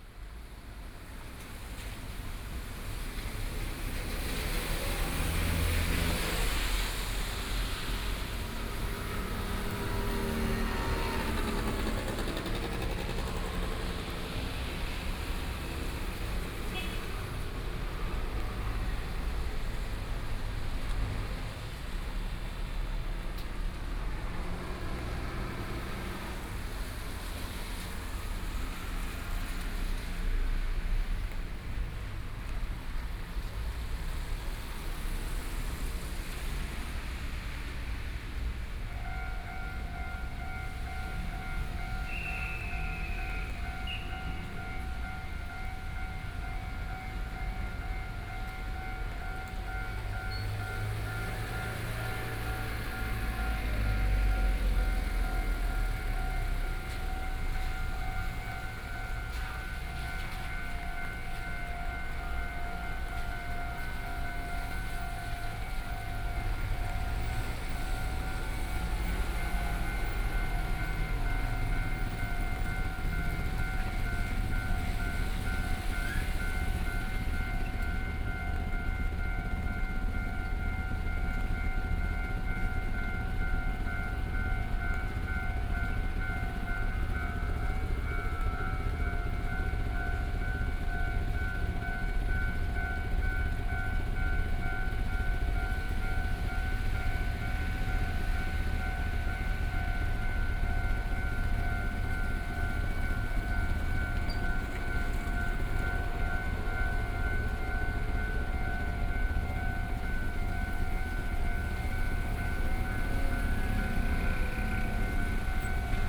Sec., Zhongshan Rd., Luodong Township - Railroad crossing
Rainy Day, Crossroads, The sound from the vehicle, Railroad crossing, Train traveling through, Zoom H4n+ Soundman OKM II
Luodong Township, Yilan County, Taiwan, 7 November